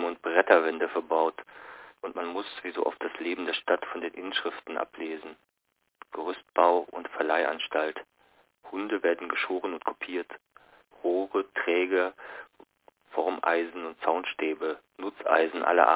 Der Landwehrkanal (9) - Der Landwehrkanal (1929) - Franz Hessel
Berlin, Germany